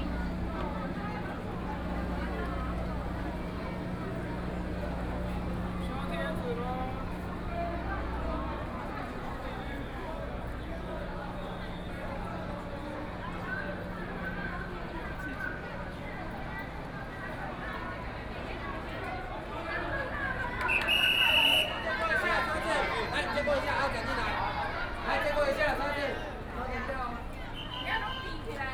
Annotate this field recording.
Packed with people on the roads to protest government, Walking through the site in protest, People cheering, Public participation in protests will all nearby streets are packed with people, The number of people participating in protests over fifty, Binaural recordings, Sony PCM D100 + Soundman OKM II